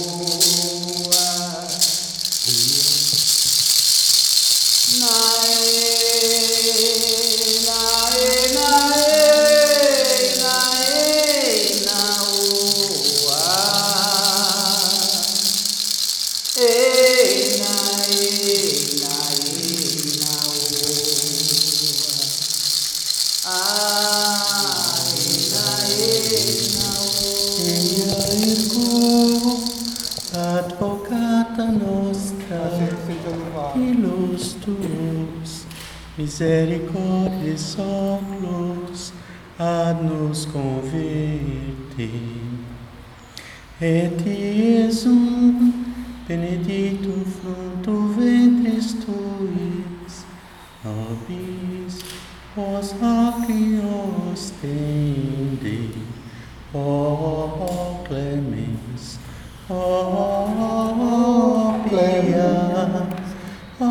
St Anna, Hamm, Germany - Analias prayer
Following the conference “Traditionally Sustainable” in Hofgeismar, a delegation of contributors from Brazil are guests of FUgE (Forum for Justice, Environment and Development) in Hamm. They meet with the “Heimatverein Heessen” for a conversation; and give a talk at FUgE Fairtrade Shop in the evening. With members of the “Heimatverein”, they visit the chapel of St. Anna. Analia A. da Silva from the Tuxa peoples performs a traditional prayer. Aderval Costa adds a prayer to Holy Mary in Latin. He writes: Anália Aparecida da Silva (Tuxá-Volk aus Pirapora am Fluss São Francisco) singt zu Beginn auf Truca und dann auf Portugiesische ein Gebet: Tupan, Gott der Indigenen, ist über all, der Hahn kündigt die Geburt des Retters für die Dorfbewohner, Kinder Jesus Christus. Anália sagt: Wir brauchen vor so vieler Ungerechtigkeit mehr Zusammenhalt. Der Rasseln, der Maracá, im Hintergrund soll dafür sorgen, dass nicht zuletzt unsere Ahnen uns hören.